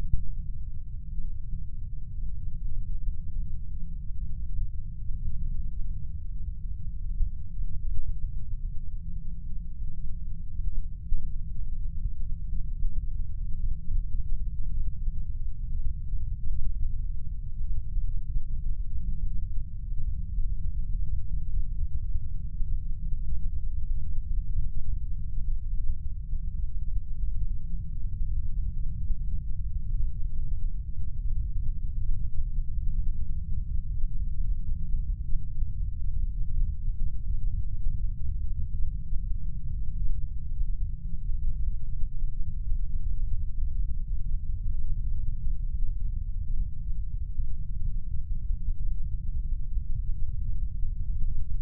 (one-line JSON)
{"title": "Uieum Dam after heavy rains", "date": "2020-08-12 06:10:00", "description": "After several weeks of heavy rains. Uieum Dam perspectives (in order) downstream safety railing, downstream aspect, lamp post, upstream aspect, downstream aspect, upstream aspect.", "latitude": "37.84", "longitude": "127.68", "altitude": "91", "timezone": "Asia/Seoul"}